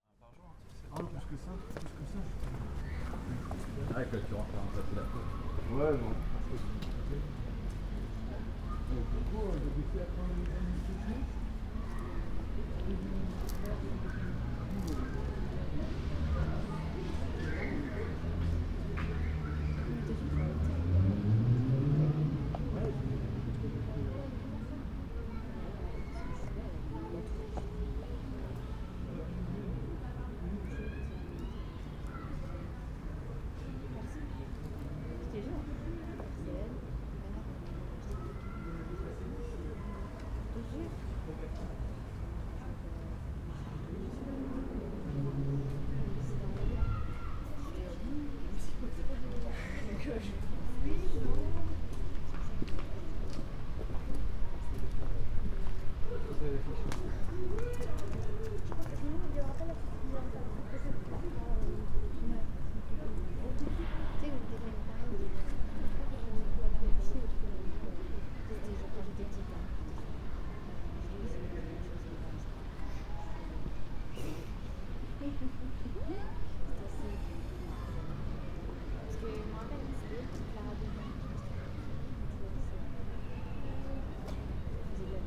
Cathédrale Saint-Maurice dAngers, Angers, France - (597) Stairs to the Cathedral
Stairs to the Cathedral (atmo) with bells at the end of the recording.
ORTF recording with Sony D100
sound posted by Katarzyna Trzeciak